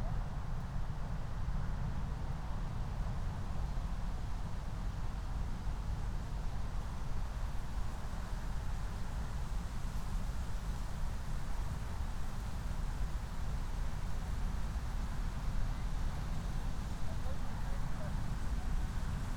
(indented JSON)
{
  "title": "Moorlinse, Berlin Buch - near the pond, ambience",
  "date": "2020-12-24 11:19:00",
  "description": "11:19 Moorlinse, Berlin Buch",
  "latitude": "52.64",
  "longitude": "13.49",
  "altitude": "50",
  "timezone": "Europe/Berlin"
}